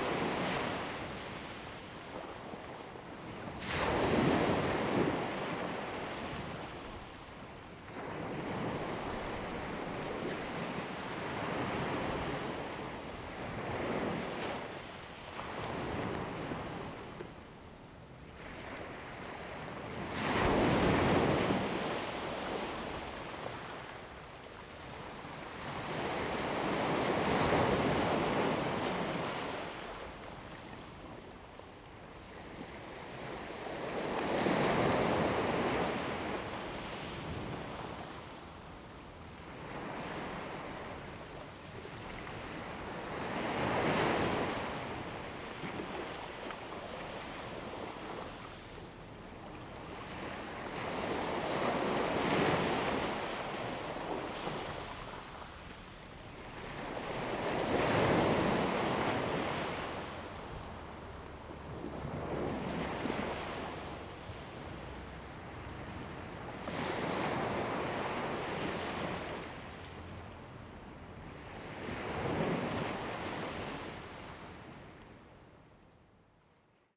Jiayo, Koto island - In the beach
In the beach, Sound of the waves
Zoom H2n MS +XY